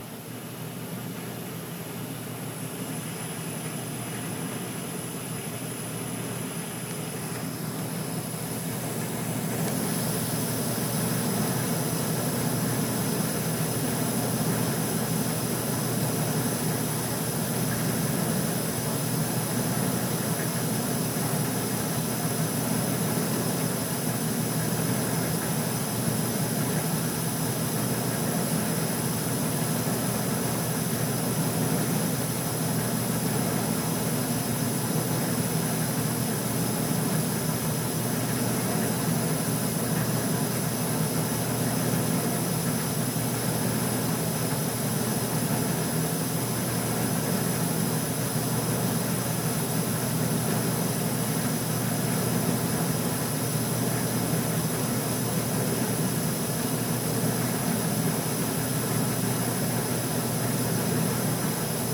Pacific Heights, San Francisco, CA, USA - burning up!
A recording of a old heater, dank grubby basement/inlaw apt. low heating ducts everywhere... the film "Brazil"/ small space/ iphone app/ getting ready to leave for school/ inside the actual unit vent close to flames.
26 September, 07:34